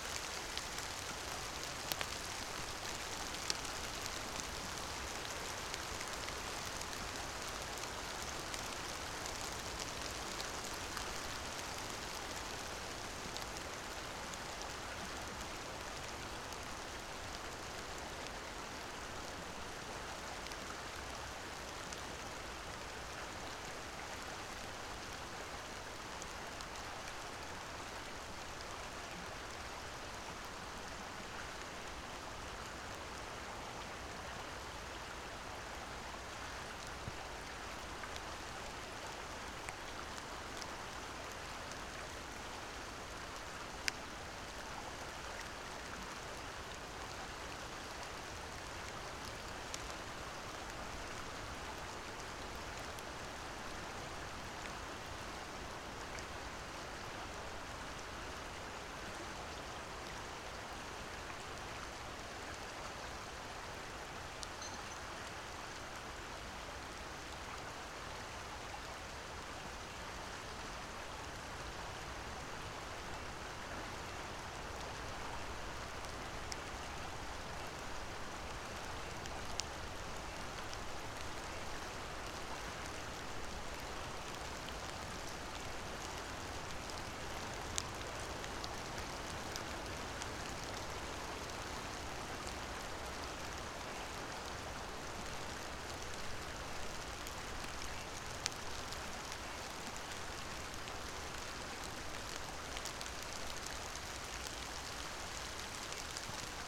Pačkėnai, Lithuania, rain in the forest
sitting in the forest. drizzle.
Utenos rajono savivaldybė, Utenos apskritis, Lietuva, 18 February 2020